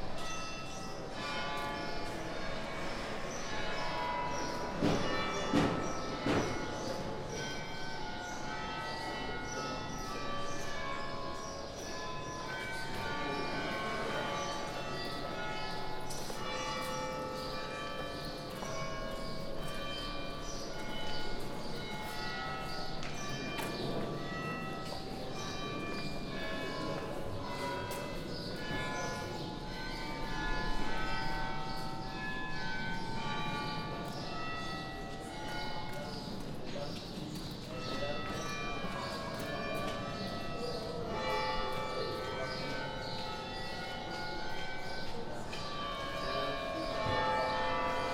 Paris, place des abesse
ambiance pendant le tournage de pigalle la nuit
Paris, France